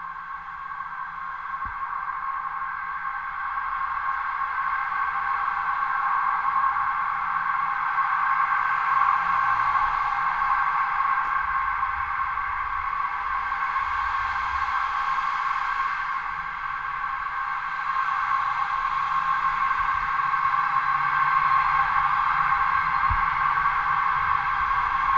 Klaipėda, Lithuania, pasangers's bridge
metallic passanger's bridge over the street. recorded with contact microphones